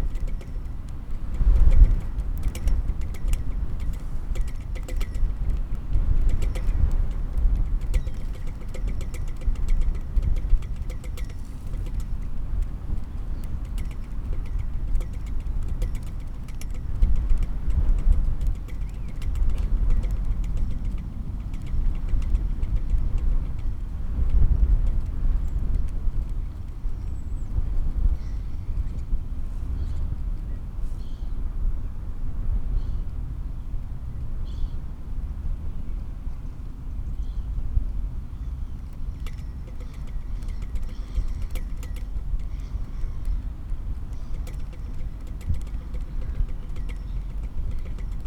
St Bartholowmews Church, Newbiggin-by-the-Sea, UK - Pinging flagpole ...
Pinging flagpole ... St Bartholowmews church yard ... Newbiggin ... open lavaliers clipped to sandwich box ... background noise from blustery wind ... voices ...
22 September 2017